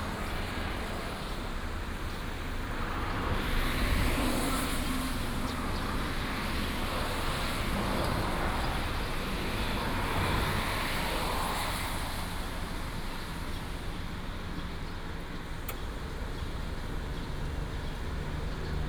Sec., Danjin Rd., Tamsui Dist., New Taipei City - Traffic Sound
Traffic Sound, In front of the convenience store, Aircraft flying through
New Taipei City, Taiwan, 16 April 2016, ~06:00